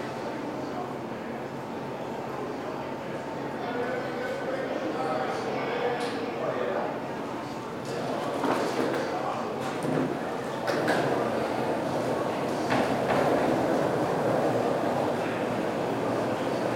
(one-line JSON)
{"title": "Midtown, Baltimore, MD, USA - Awaiting the Train", "date": "2016-11-21 11:15:00", "description": "Recorded in Penn Station with a H4n Zoom.", "latitude": "39.31", "longitude": "-76.62", "altitude": "24", "timezone": "GMT+1"}